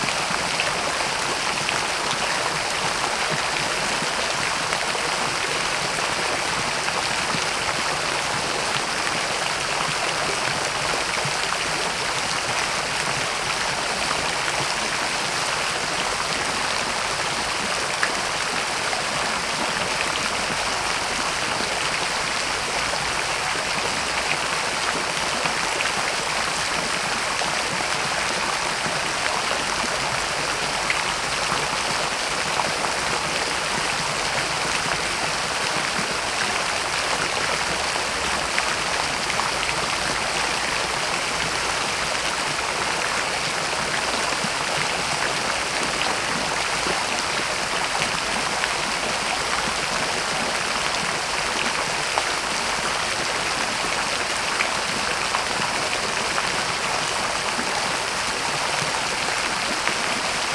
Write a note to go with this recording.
Fontanna Teatr Lalka w Pałac Kultury i Nauki, Warszawa